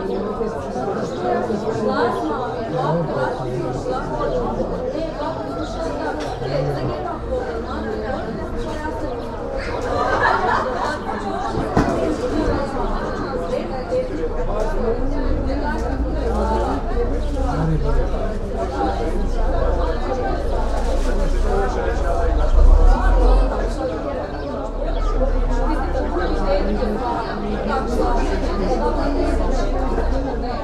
Muzikafe, Ptuj, Slovenia - cafe terrace on a tuesday morning
from a window in a ptuj hostel just over their busy cafe terrace on a hot weekday morning